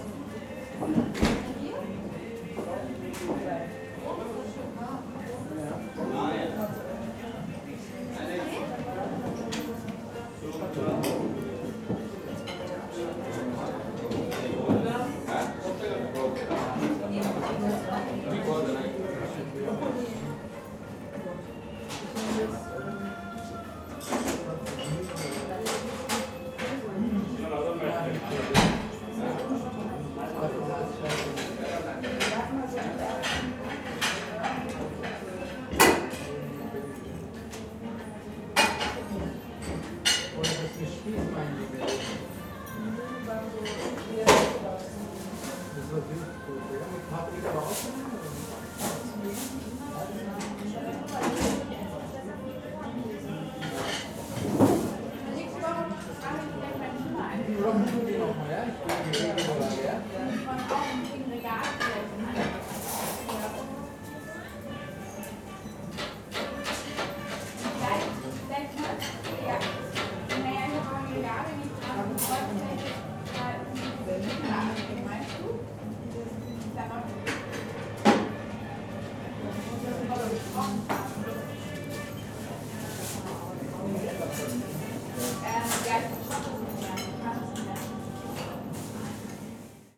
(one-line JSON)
{"title": "berlin, pannierstr. - india restaurant", "date": "2011-03-27 18:30:00", "description": "small india restaurant at pannierstr., ambience. (for Lola G., because of the background music...)", "latitude": "52.49", "longitude": "13.43", "altitude": "41", "timezone": "Europe/Berlin"}